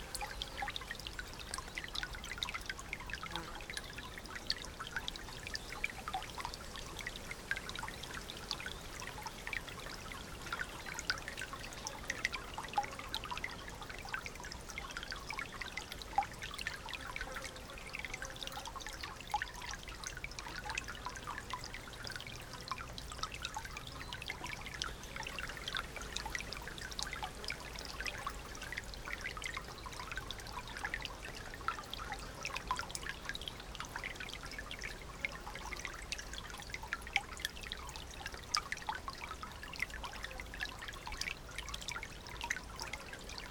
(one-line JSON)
{"title": "Stoborough Heath National Nature Reserve, UK - Tiny stream sounding like a musical instrument", "date": "2020-09-20 15:35:00", "description": "A beautiful Sunday afternoon walk across the reserve, Linnets and a Wheatear, with Ravens and a myriad of insects scratching away in the landscape. A small wooden bridge strides a tiny stream with the most wonderful tinkling water sounds spilling into the air as we cross. Sony M10, inbuilt mics.", "latitude": "50.67", "longitude": "-2.09", "altitude": "11", "timezone": "Europe/London"}